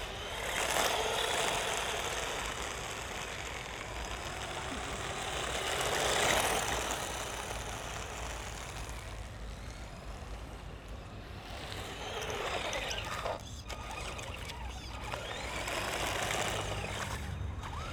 an older man is demonstrating his remote controlled model car on a platform below the market area. he comes here daily, with cars, helicopters and UFOs.